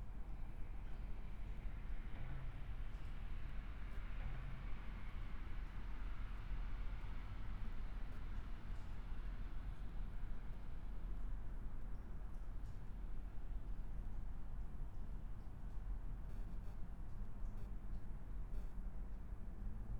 {
  "title": "river Traun railway bridge, Linz - under bridge ambience",
  "date": "2020-09-10 00:23:00",
  "description": "00:23 river Traun railway bridge, Linz",
  "latitude": "48.25",
  "longitude": "14.33",
  "altitude": "248",
  "timezone": "Europe/Vienna"
}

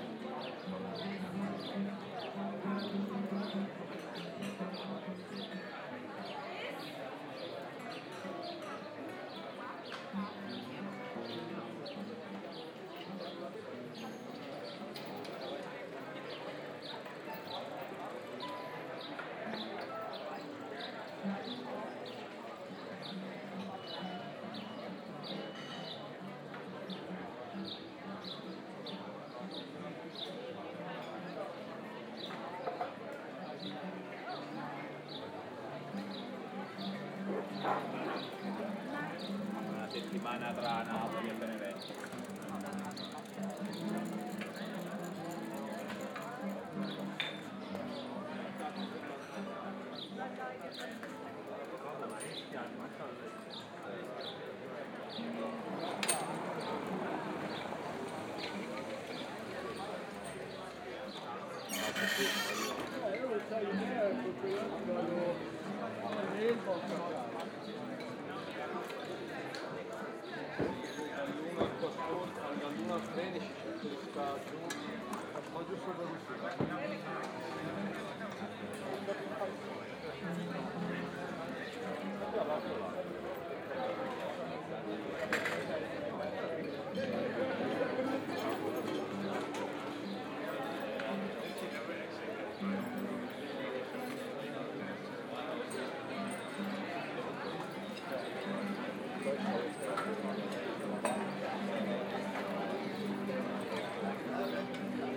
{
  "title": "Maybachufer, Berlin, Germany - Familiar Voices - 3rd June 2022",
  "date": "2022-06-03 12:00:00",
  "description": "Familiar voices at the Neuköllner Wochenmarkt Maybachufer.",
  "latitude": "52.49",
  "longitude": "13.42",
  "altitude": "38",
  "timezone": "Europe/Berlin"
}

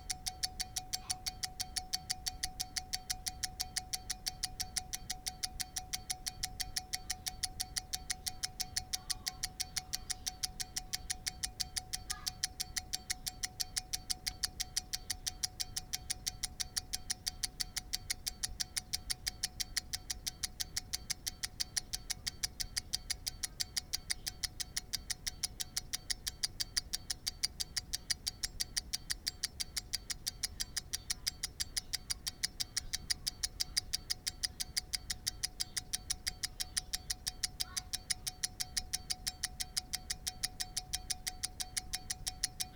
{
  "title": "Unnamed Road, Malton, UK - pocket watch ticking ...",
  "date": "2021-07-24 20:30:00",
  "description": "pocket watch ticking ... a rotary pocket skeleton watch ticking ... jrf contact mics attached to shell to olympus ls 14 ...",
  "latitude": "54.12",
  "longitude": "-0.54",
  "altitude": "76",
  "timezone": "Europe/London"
}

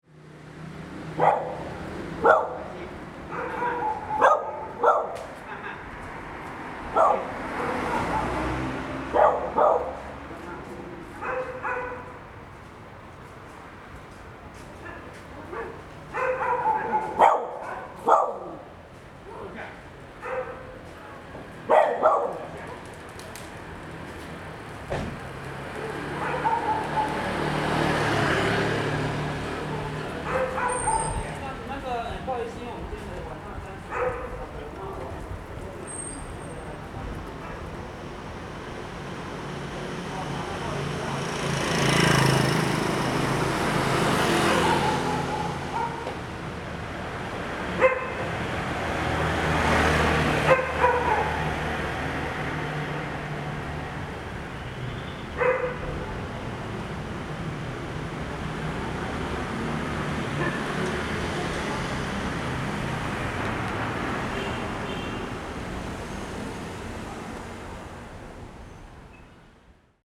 {
  "title": "Ziqiang St., Yonghe Dist., New Taipei City - Small alley",
  "date": "2012-02-15 19:50:00",
  "description": "Small alley, Dogs barking, Traffic Sound, Sony ECM-MS907, Sony Hi-MD MZ-RH1",
  "latitude": "24.99",
  "longitude": "121.52",
  "altitude": "14",
  "timezone": "Asia/Taipei"
}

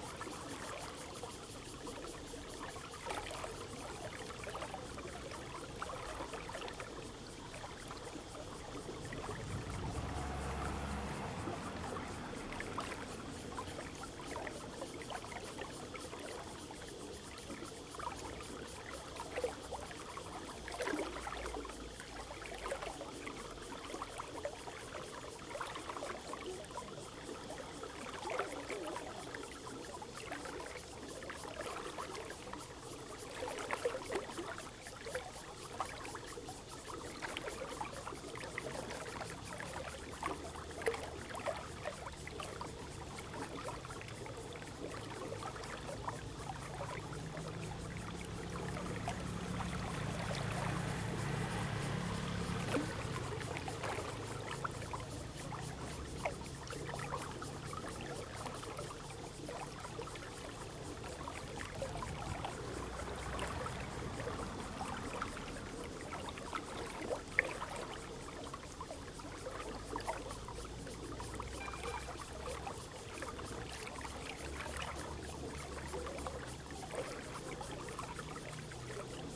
{
  "title": "Xipu Rd., Guanshan Township - The sound of water",
  "date": "2014-09-07 10:28:00",
  "description": "Irrigation waterway, Traffic Sound, The sound of water, Very hot weather\nZoom H2n MS+ XY",
  "latitude": "23.05",
  "longitude": "121.17",
  "altitude": "228",
  "timezone": "Asia/Taipei"
}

